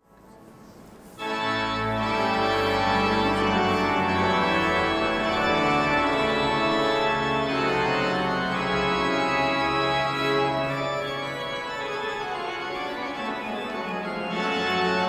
San Marco, Wenecja, Włochy - Soundcheck before the organ concert
Soundcheck before the organ concert.
OLYMPUS LS-100
11 December 2016, ~15:00